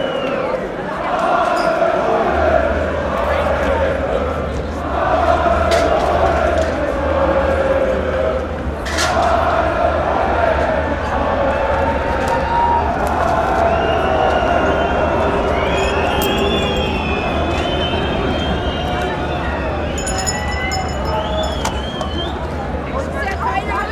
berlin, kottbusser tor
aftermath of the 1st of may demonstration, people gathering at kottbusser tor